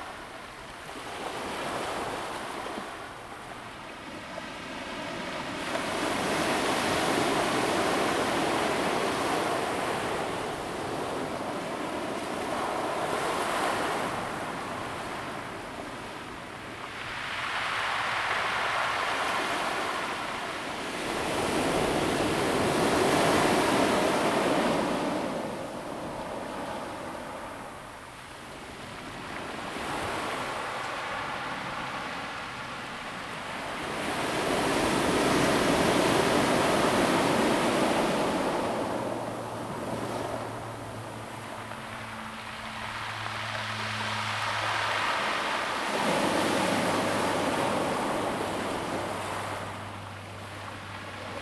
加灣, Xincheng Township - the waves
sound of the waves
Zoom H2n MS+XY +Sptial Audio
Hualien County, Taiwan, 19 July 2016